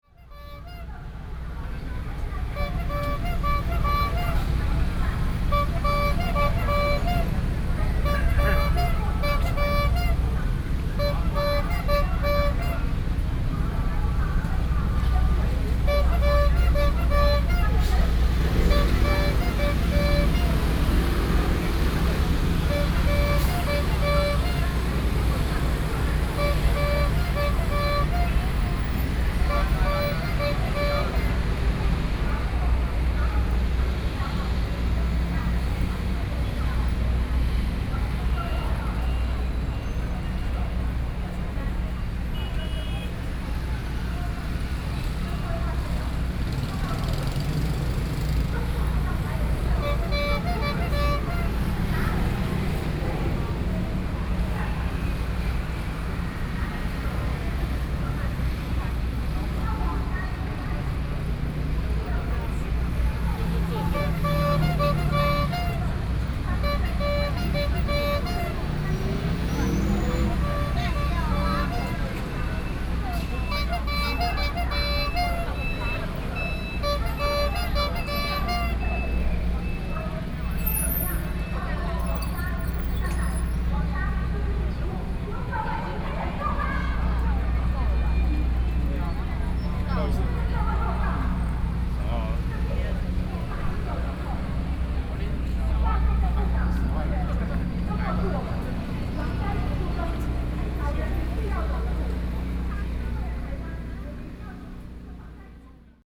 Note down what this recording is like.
Selling ice cream, Sony PCM D50 + Soundman OKM II